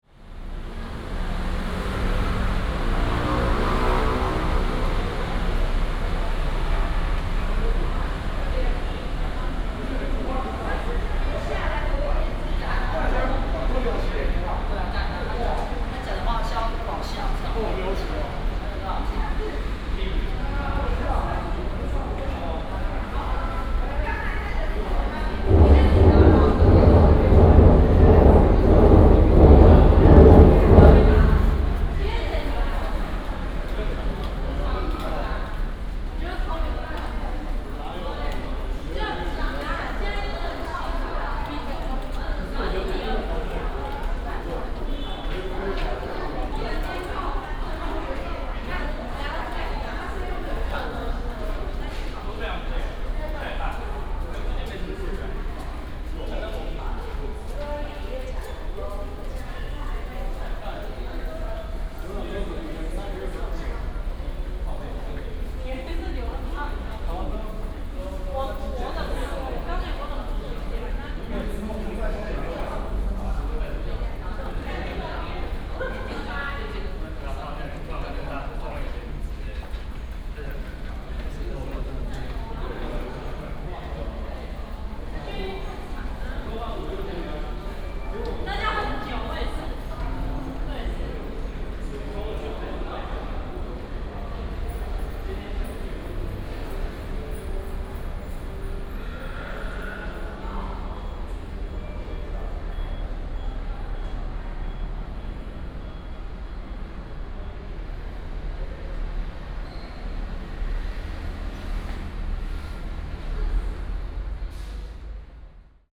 站越站人行地下道, Hsinchu City - Walk in the underground road
Walk in the underground road, Traffic sound, The train runs through